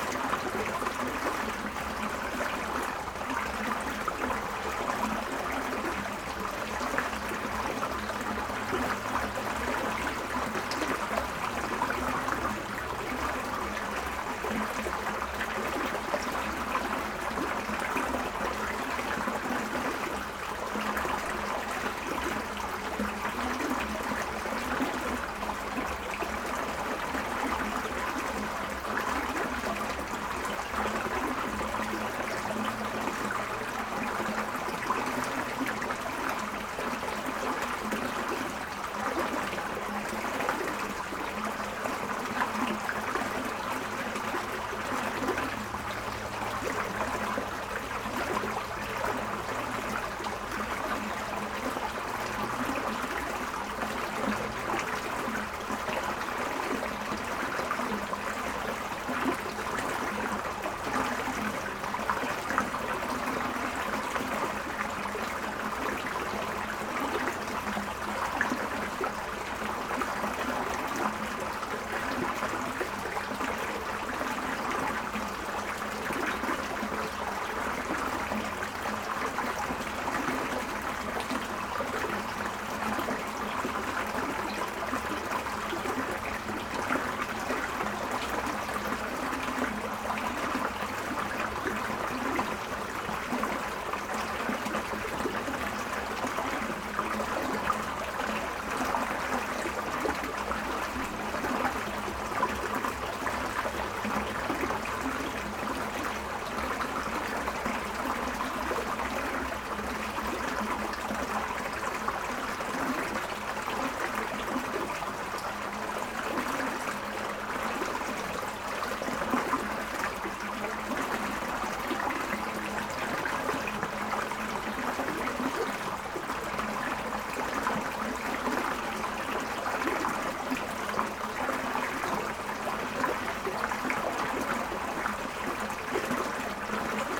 Beselich Niedertiefenbach, Brückenstr. - creek at bridge
sound of the little creek Tiefenbach, which gave this village its name, at nighttime. the creek is not in a good condition. the water is polluted and smells bad.
Beselich, Germany, 2 June, 23:30